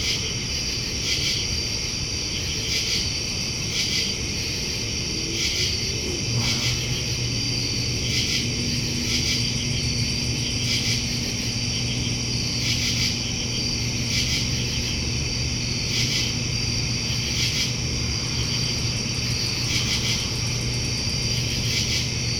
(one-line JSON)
{"title": "Aronow Pl, Mahwah, NJ, USA - Nocturnal Insect Chorus", "date": "2021-08-23 22:59:00", "description": "A chorus consisting of crickets, katydids, and other nocturnal insects. This audio was captured from an open window in a large house. Cars can be heard in the background, as can the hum of an AC fan.\n[Tascam DR-100mkiii w/ Primo EM-272 omni mics]", "latitude": "41.08", "longitude": "-74.13", "altitude": "166", "timezone": "America/New_York"}